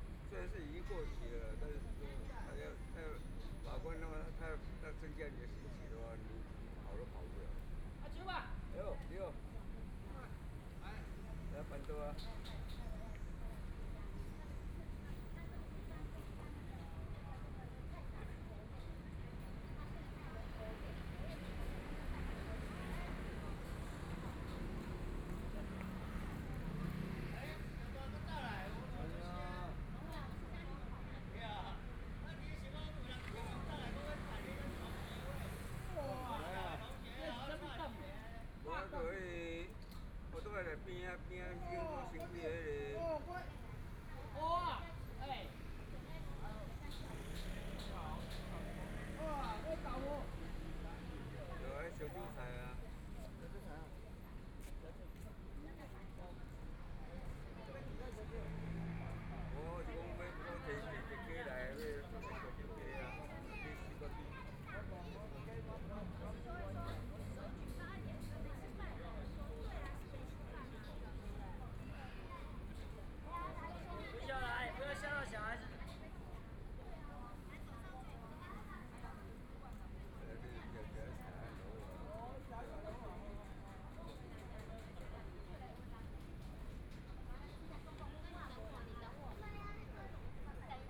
ZhuChang Park, Taipei City - In the corner of the park
In the corner of the park, Community-based park, Traffic Sound, A group of people chatting, Binaural recordings, Zoom H4n+ Soundman OKM II